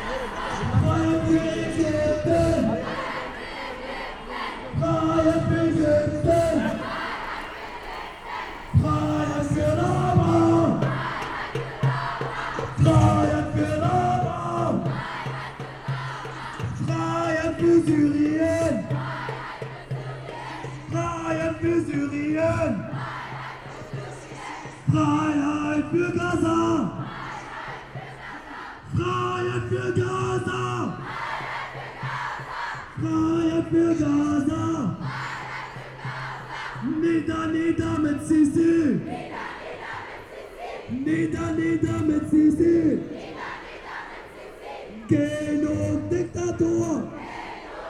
berlin: kottbusser damm - pro Mursi demonstration
demonstration pro Mursi and the muslim brotherhood, Berlin Kottbusser Damm, Saturday evening.
(Sony PCM D50, DPA4060)